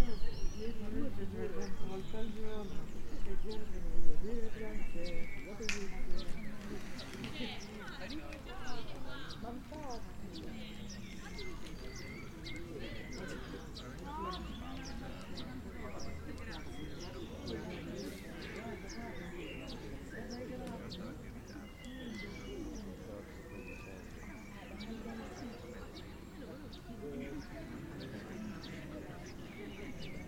Perugia, Italia - people chilling on the grass
bells, people speaking, birds
[XY: smk-h8k -> fr2le]